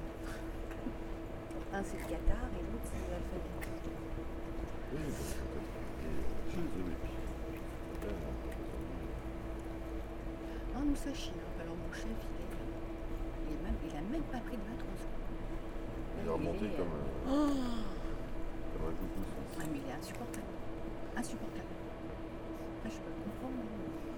Maintenon, France - Maintenon station
On the Maintenon station platform, my brother Nicolas will catch his train to Paris. This is an early quiet morning on the platform, with a lot of workers commuting to Paris.